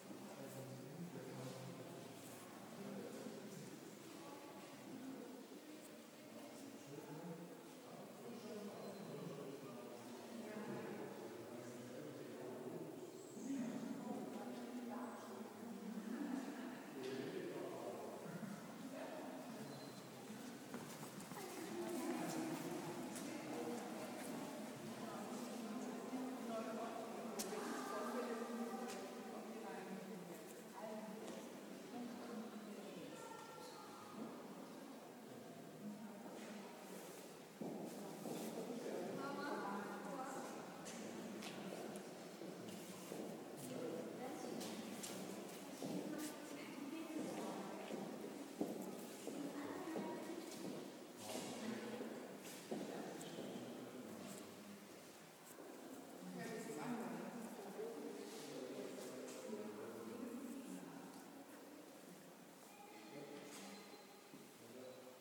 {
  "title": "Saalburg, Bad Homburg",
  "description": "Generations, Ausstellungsraum, Geräusche",
  "latitude": "50.27",
  "longitude": "8.57",
  "altitude": "428",
  "timezone": "Europe/Berlin"
}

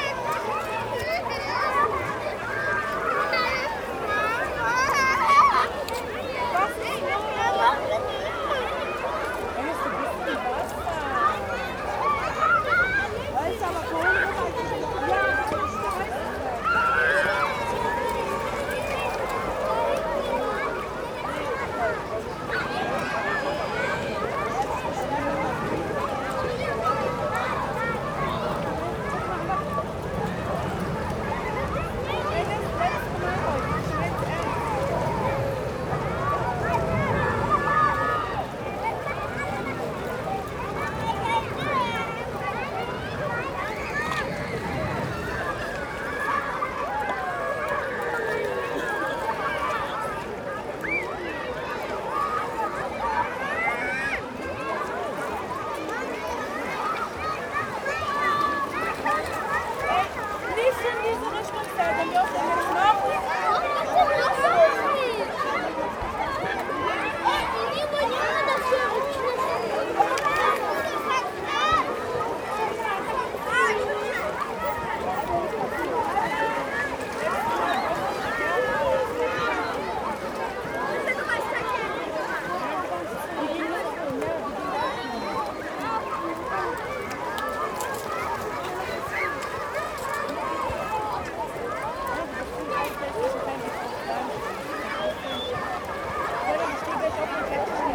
{
  "title": "Wedding, Berlin, Germany - Paddling Pool on a hot summer Sunday",
  "date": "2015-08-02 17:07:00",
  "description": "Lots of kids and families on a beautiful hot summer Sunday afternoon.",
  "latitude": "52.56",
  "longitude": "13.35",
  "altitude": "42",
  "timezone": "Europe/Berlin"
}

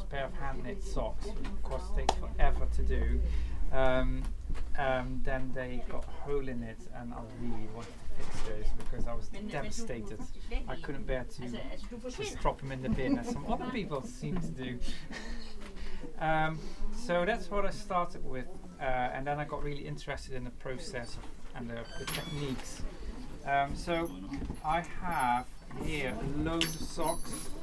{
  "title": "Jamieson & Smith, Shetland Islands, UK - Tom of Holland's master darning class, Shetland Wool Week, 2013",
  "date": "2013-10-11 14:00:00",
  "description": "This is the sound of the wonderful Tom van Deijnen AKA Tom of Holland introducing his darning masterclass during Shetland Wool Week 2013. Tom is an exceedingly talented mender of clothes as well as a superb knitter. Meticulous in detail and creative with his ideas, his philosophy on mending clothes is both imaginative and practical. I love this introduction at the start of his class, where you can clearly hear how impressed everyone in attendance is to see Tom's wonderful examples of mended and hand-knitted clothes, and you can also hear some of the busyness and atmosphere in the Jamieson & Smith wool shop during Wool Week - the frequency of the chimes on the door jangling every few seconds signify the huge numbers of folk coming in and out to buy yarn! Listen out for \"oohs\" and \"aahs\" as Tom produces his textiles for people to see.",
  "latitude": "60.16",
  "longitude": "-1.16",
  "altitude": "1",
  "timezone": "Europe/London"
}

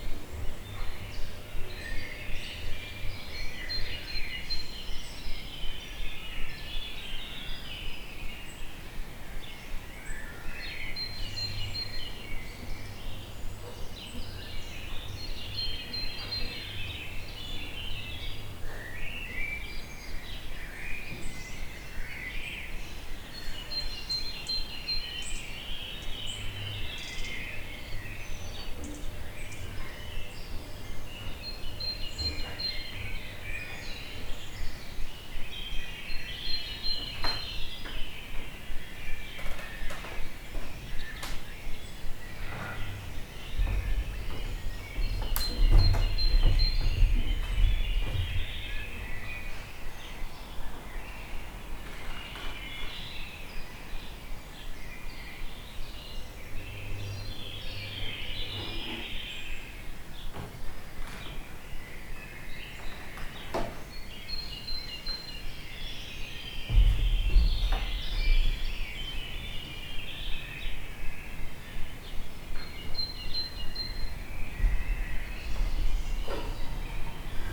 {
  "title": "Innstraße, Innsbruck, Österreich - Tropical St. Nikolaus Bird a lot",
  "date": "2018-06-19 04:44:00",
  "description": "vogelweide, waltherpark, st. Nikolaus, mariahilf, innsbruck, stadtpotentiale 2017, bird lab, mapping waltherpark realities, kulturverein vogelweide, morgenstimmung vogelgezwitscher, bird birds birds, tropical innsbruck",
  "latitude": "47.27",
  "longitude": "11.39",
  "altitude": "580",
  "timezone": "Europe/Vienna"
}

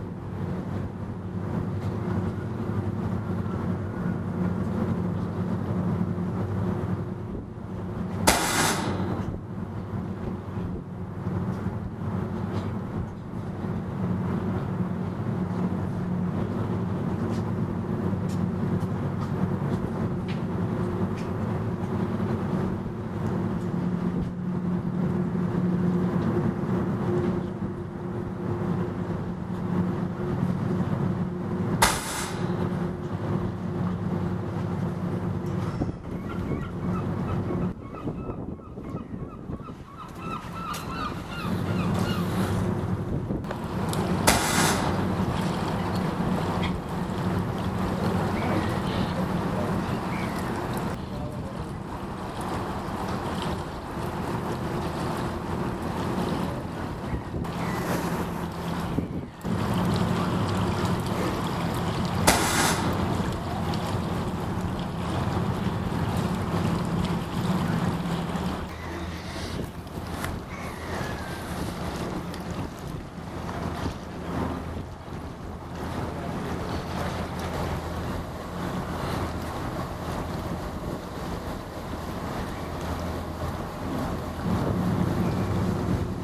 {
  "title": "Royal Borough of Greenwich, Greater London, UK - The Sky Clipper",
  "date": "2014-01-11 14:20:00",
  "description": "This was recorded here as the clipper made its route threw the thames. I used a Olympus dm 670 and edited all my recordings but without using any effects or processing.",
  "latitude": "51.50",
  "longitude": "0.07",
  "altitude": "1",
  "timezone": "Europe/London"
}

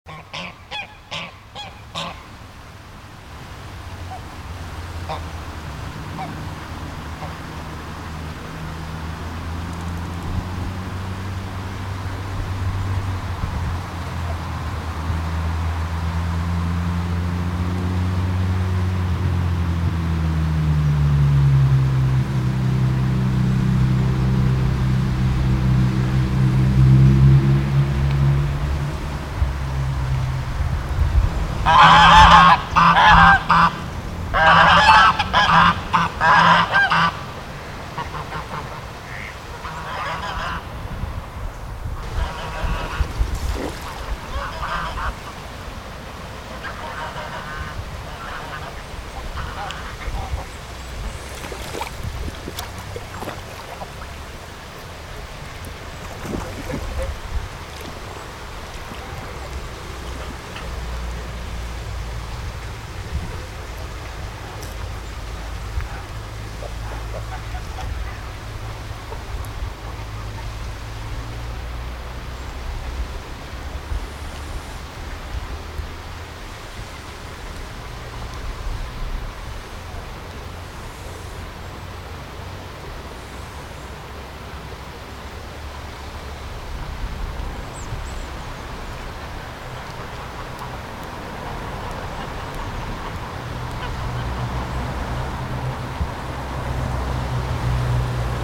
{
  "title": "velbert neviges, schloss hardenberg, gänseteich",
  "description": "mittags am gänseteich\nsoundmap nrw: social ambiences/ listen to the people - in & outdoor nearfield recordings",
  "latitude": "51.32",
  "longitude": "7.08",
  "altitude": "154",
  "timezone": "GMT+1"
}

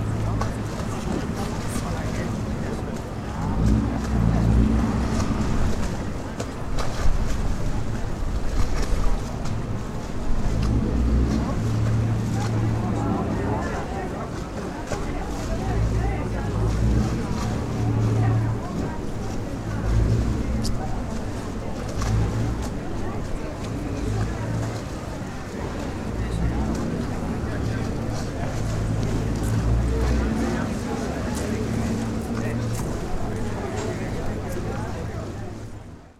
{
  "title": "Castello, Venezia, Italien - campo s. biagio",
  "date": "2009-10-26 11:47:00",
  "description": "campo s. biagio, venezia-castello",
  "latitude": "45.43",
  "longitude": "12.35",
  "altitude": "7",
  "timezone": "Europe/Rome"
}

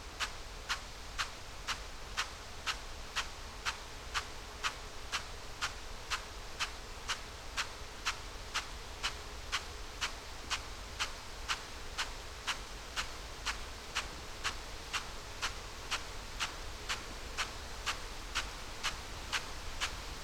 {
  "title": "Malton, UK - potato irrigation ...",
  "date": "2022-07-16 06:20:00",
  "description": "potato irrigation ... bauer rainstar e 41 to irrigation sprinkler ... xlr sass on tripod to zoom h5 ... on the outside of the sprinkler's arc as it hits the plants and trackway with its plume of water ... no idea why find this so fascinating ... must be old age and stupidity in abundence ...",
  "latitude": "54.13",
  "longitude": "-0.56",
  "altitude": "104",
  "timezone": "Europe/London"
}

